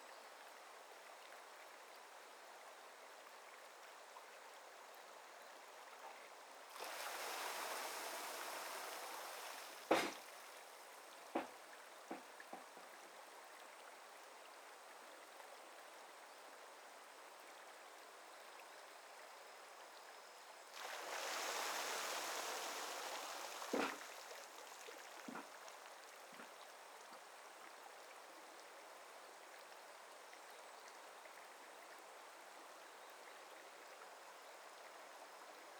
Imarichō, Imari, Saga, Japan - Water Scoop Earth Mills in Cool Shade
Traditional river fed clay mills at Imari (伊万里) Pottery Village. The chimes are a motion sensor triggered pottery bell tree that is installed next to the mills. Summer 2020.
佐賀県, 日本, 20 August 2020